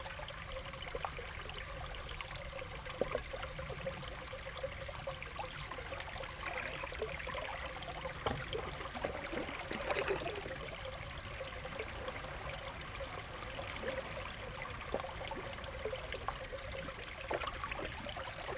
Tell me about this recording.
stereo recording (AT8022, Tascam DR40) of the spot where a stream flows into the sea. wave lapping on the rocks on the right channel, stream sounds on the left.